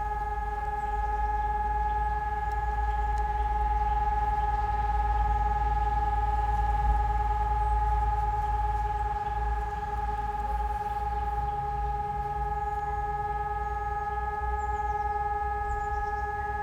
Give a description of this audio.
On the first Wednesday of every month the sirens from cold war times are still tested in Prague. Depending on where one is single or multiple sirens can be heard. They are preceeded by an announcement that the test will happen (not recorded) and ended by an announcement all is finished.